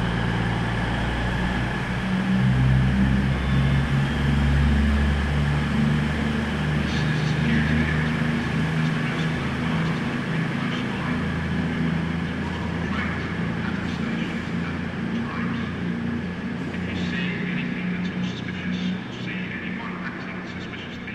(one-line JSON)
{"title": "Bournemouth train station, UK - waiting at Bmouth train station", "date": "2012-10-11 17:07:00", "latitude": "50.73", "longitude": "-1.86", "altitude": "36", "timezone": "Europe/London"}